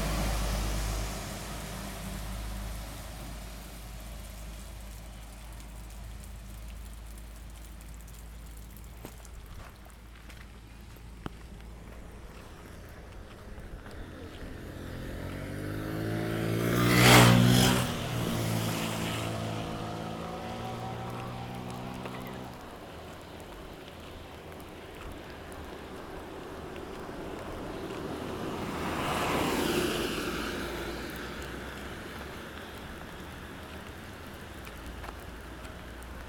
Chigorodó, Chigorodó, Antioquia, Colombia - Se vino la lluvia
Getting caught by the rain while walking from the school to the hotel. The rain came in, remained and kept going.
Zoom H2n
Stereo Headset Primo 172
6 December 2014, 10:27